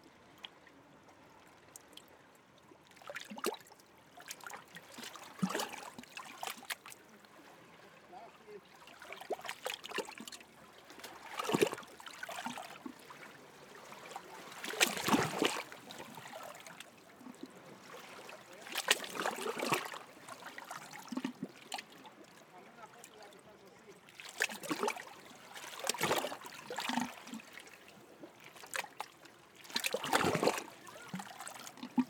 C. Dos Calas, Benidorm, Alicante, Espagne - Benidorm - Espagne - Crique de Ti Ximo
Benidorm - Province d'Alicante - Espagne
Crique de Ti Ximo
Ambiance 1
ZOOM H6